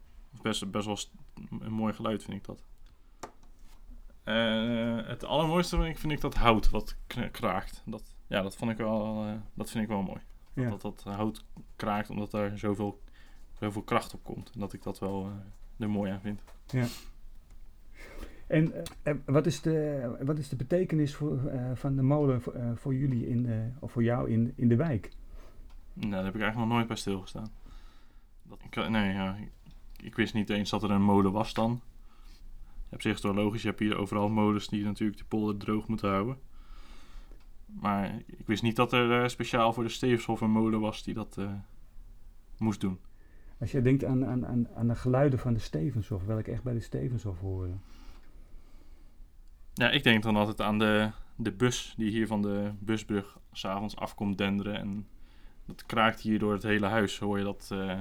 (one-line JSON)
{"title": "Henk Jan vertelt over geluiden van de Stevenshof", "date": "2011-09-10 15:07:00", "description": "Henk Jan luistert naar de geluiden van molen en vertelt over de geluiden in zijn omgeving", "latitude": "52.16", "longitude": "4.45", "timezone": "Europe/Amsterdam"}